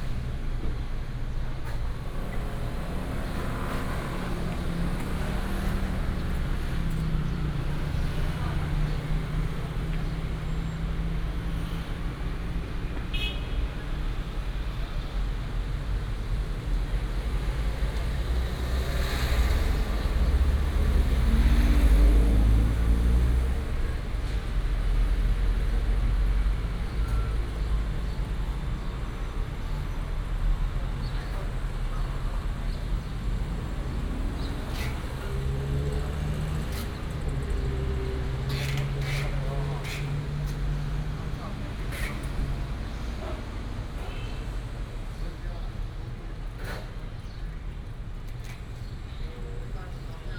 Zhongshan E. Rd., Sihu Township - at the intersection
The main lively area of the village, traffic Sound, Bird sound
Yunlin County, Taiwan, 8 May 2018